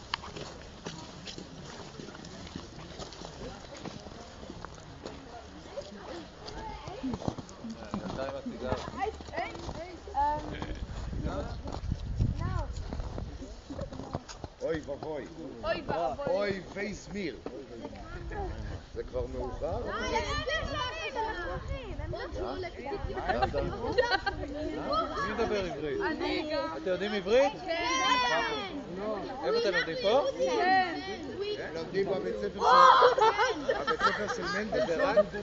Kids not listening to their tourguide
If there is 15 cm of snow on the ground, a snow ball battle is certainly more interesting than a guided tour through Berlin... it is so cold here! but its beautiful...